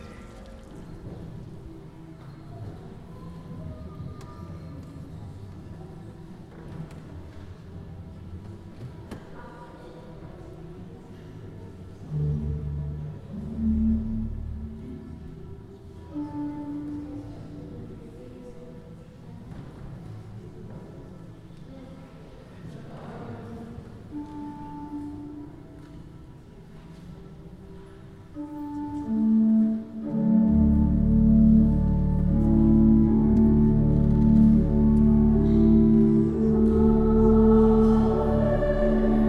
Meersburg - Deisendorf - Salem - A day in the wild Bodensee
A sound walk from the city sounds recorded in Meersburg, the choir was recorded in the Basilik of Birnau and the nature noises were recorded in the forest around Deisendorf, Salem and Illmensee, recorded and edited By Maxime Quardon
Salem, Germany